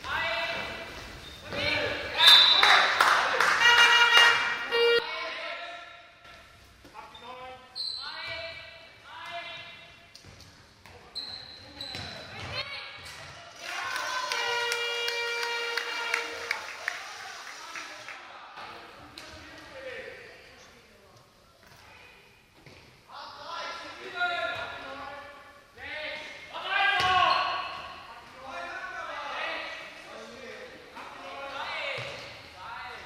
ratingen west, sportzentrum, hallenhandball
project: social ambiences/ listen to the people - in & outdoor nearfield recordings